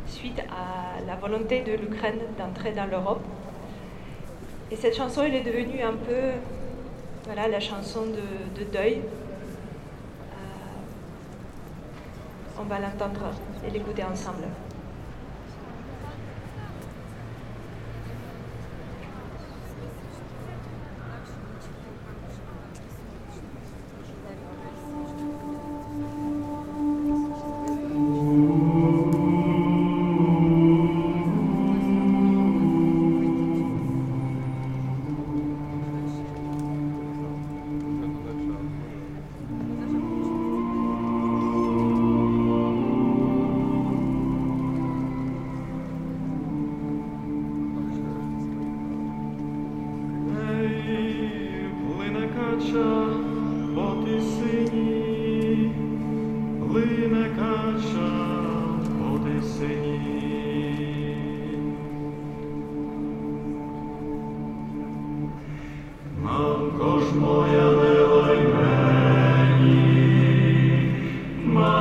Pl. du Capitole, Toulouse, France - mourning song
anti-war demonstration for Ukraine
mourning song
Captation : ZOOMH6
France métropolitaine, France, 6 March